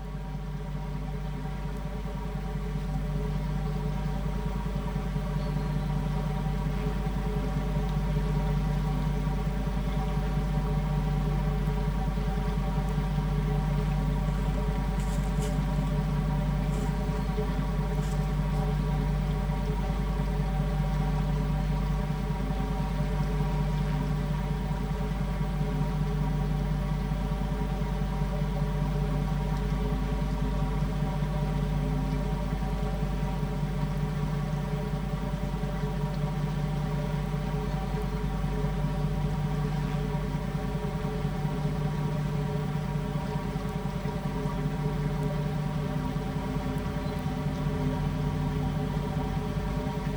small microphones in the pipe by the river. additional channel: electromagnetic antenna Priezor